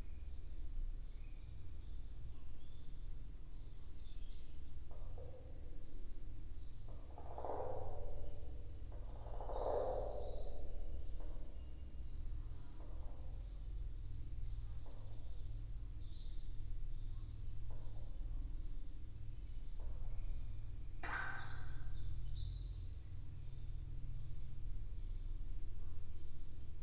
Antalieptė, Lithuania, in the well
some well at pumping station. I have managed to put my microphones into it...
Utenos apskritis, Lietuva, 2 May 2020